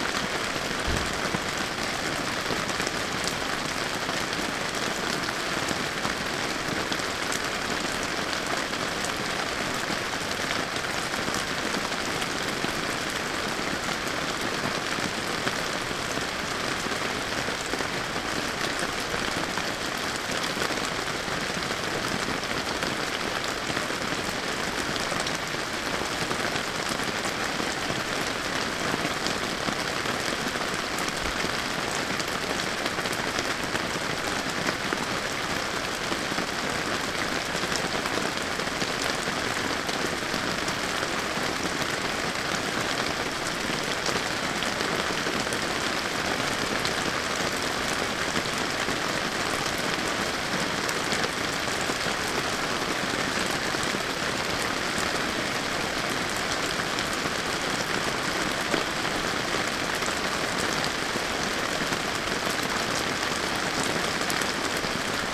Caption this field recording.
Le début d'une longue nuit pluvieuse telle qu'on pouvait l'entendre de l'intérieur de la tente.